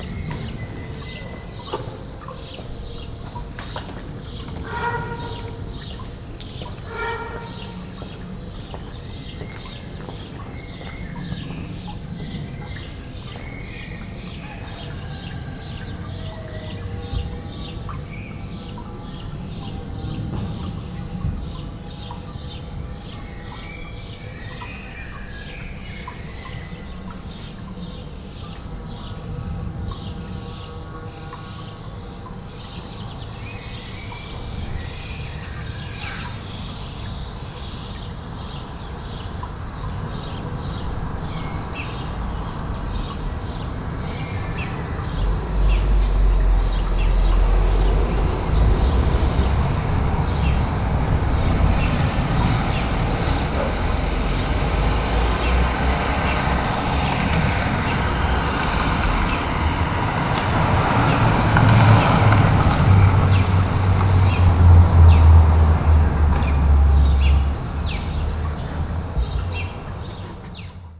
Piazza della Pace, Sassoleone BO, Italy - Sassoleone Piazza della pace ambience
Sassoleone Piazza della pace ambience, recorded with a Sony PCM-M10
EMR, Italia